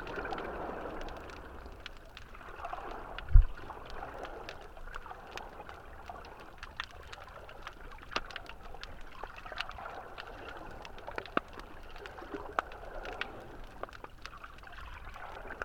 Chania, Crete, underwater near lighthouse
closer to sea - more life